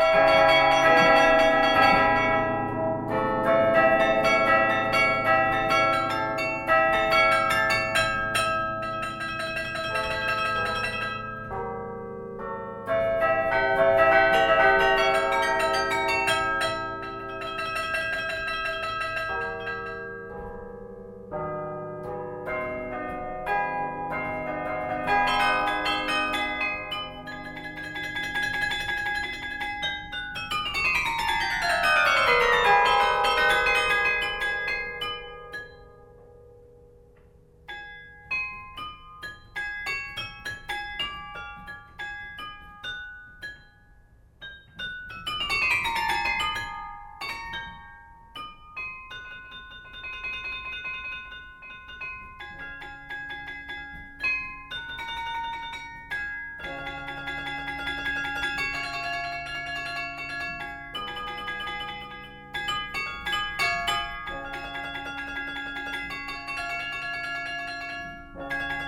Mons, Belgique - Mons carillon
Carillon of the Mons belfry. Melody is played by Pascaline Flamme.
Mons, Belgium, 1 December 2012